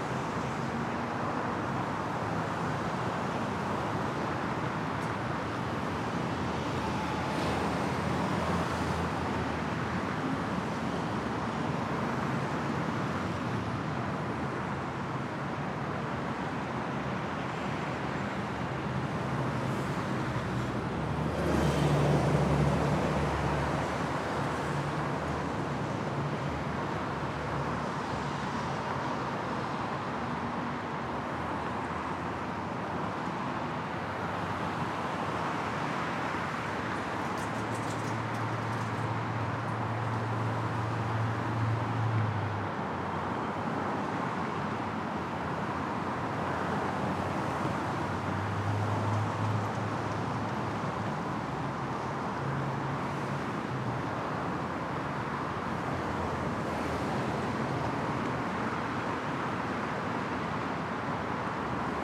Cammeray NSW, Australia - Falcon Street Pedestrian and Cylcist Bridge
Recorded on this bridge at peak hour on a weekday morning - cars coming to and from the harbour bridge and the city - DPA 4060s, H4n